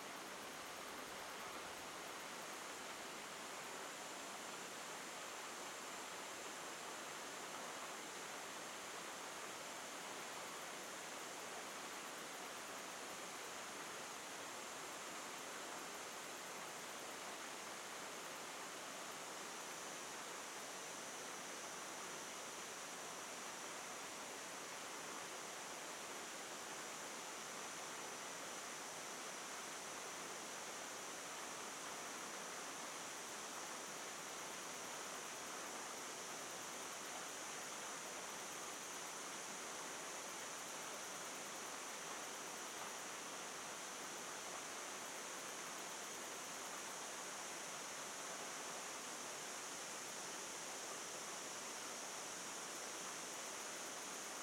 Bolulla - Espagne
Font dels Xoros
Cigales
ZOOM F3 + AKG C 451B